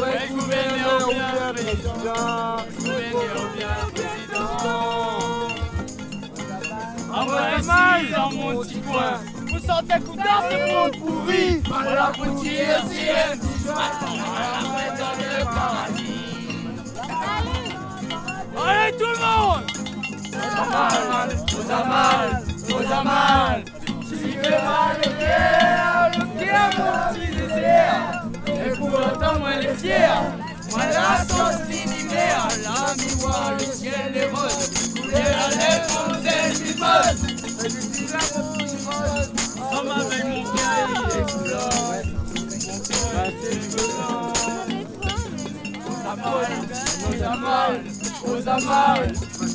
Campfire in Marla, Cirque De Mafat, Réunion - Drunken campfire in Marla
Field recording using stereo ZOOM H4N. People around a campfire in the early hours of the morning after "Sound système" small music festival in the town of Marla. No matter where you go in the world, people still sit around campfires and sing about weed. And play the tambourine badly, too close to the microphone.